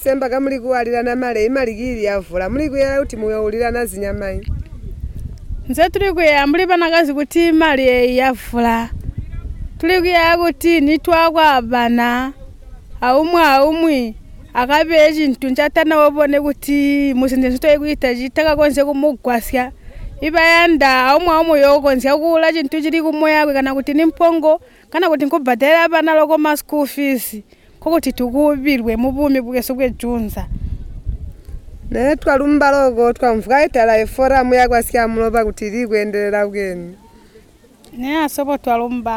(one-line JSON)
{"title": "Sebungwe River Mouth, Binga, Zimbabwe - i'm Tema Munkuli Secretary of Simatelele Women’s Forum...", "date": "2016-07-19 11:00:00", "description": "Tema Munkuli is the Secretary of Simatelele Women’s Forum. Tema talks about the duties of the Forum’s 25 members and how they are organising themselves. Among the benefits for the women she mentions that the women are able to afford the school fees for their children and buying livestock such as goats.\na recording by Ottilia Tshuma, Zubo's CBF at Simatelele; from the radio project \"Women documenting women stories\" with Zubo Trust, a women’s organization in Binga Zimbabwe bringing women together for self-empowerment.", "latitude": "-17.75", "longitude": "27.23", "altitude": "502", "timezone": "Africa/Harare"}